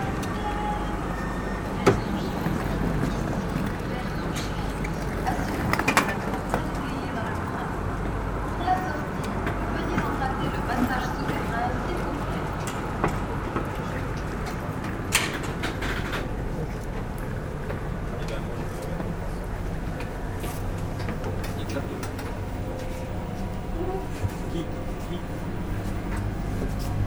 Vernon, France - Vernon station
Taking the train to Paris in the Vernon station. A first train to Mantes-La-Jolie arrives, and after the train to Paris Saint-Lazare arrives.